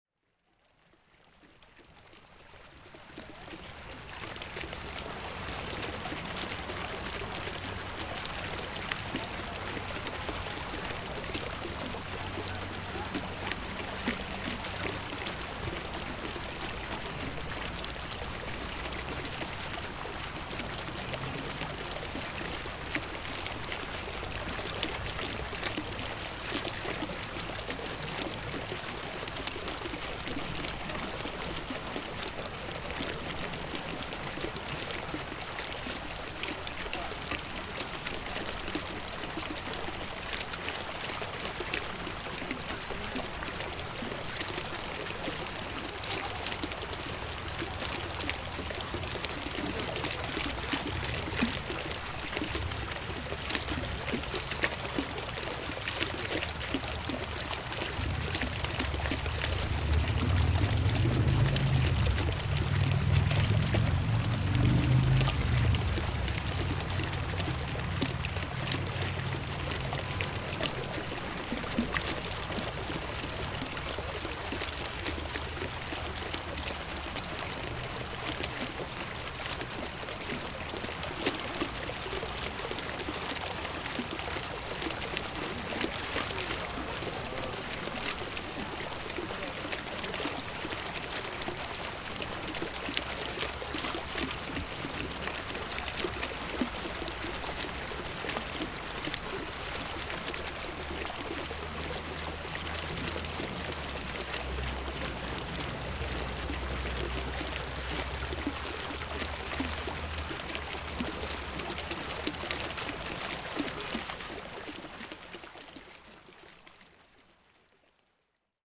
Bolton Hill, Baltimore, MD, USA - Monday Afternoon's Fountain
the sound of the fountain in front of the Brown Center of MICA.
Monday afternoon, sunny.
Using TASCAM D-40.